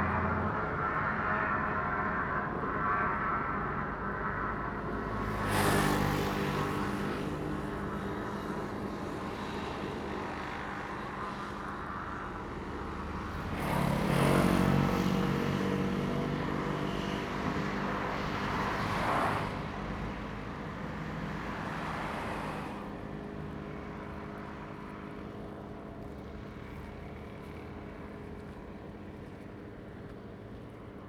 中正橋, Baisha Township - at the Bridge
at the Bridge, Traffic Sound
Zoom H2n MS +XY